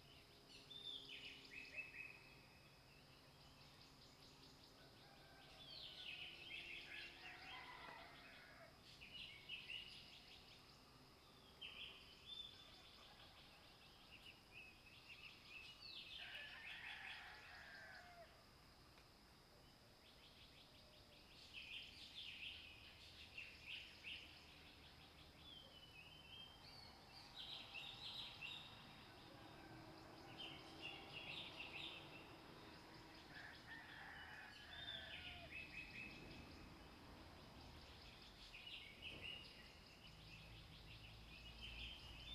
Crowing sounds, Bird calls, Frogs chirping, Early morning
Zoom H2n MS+XY